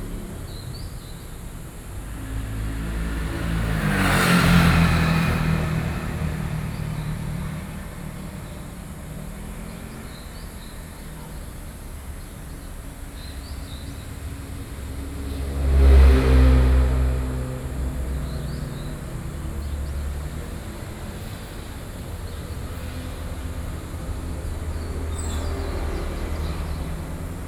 Gongliao, New Taipei City - Traffic noise